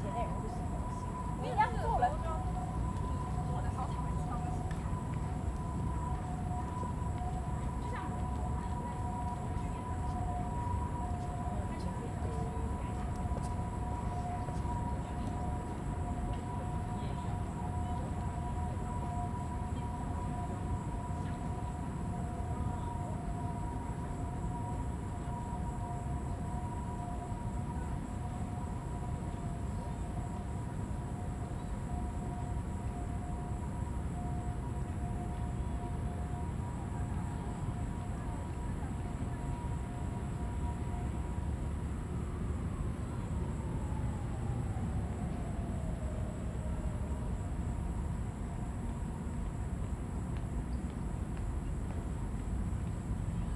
大安森林公園, 大安區 Taipei City - in the Park
in the Park, Traffic noise
Zoom H2n MS+XY